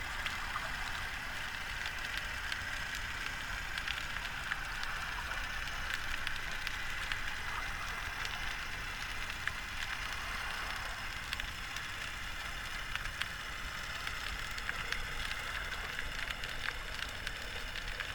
Platania, Crete, listening to the distant motor boat
hydrophone: listening to the distant motor boat
Ag. Marina, Greece, 28 April, 10:30